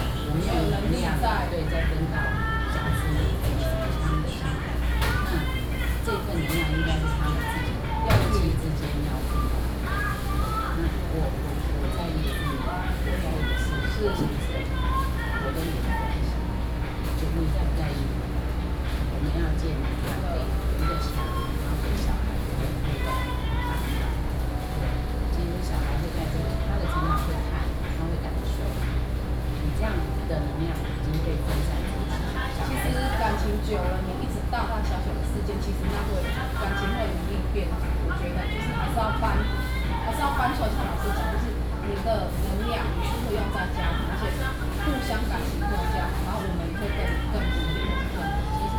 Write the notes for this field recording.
In the convenience store inside, Binaural recordings, Sony PCM D50 + Soundman OKM II